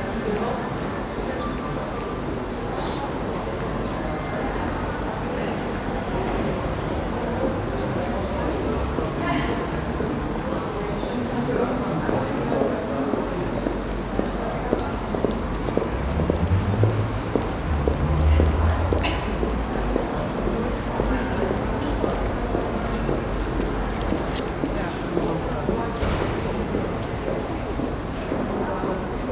Mitte, Kassel, Deutschland - Kassel, main station, hall and announcement
Inside the rear building of the main station during the documenta 13.
The sound of steps and international visitors walking by. Finally an announcement through crackling speakers.
soundmap d - social ambiences and topographic field recordings
12 September 2012, ~13:00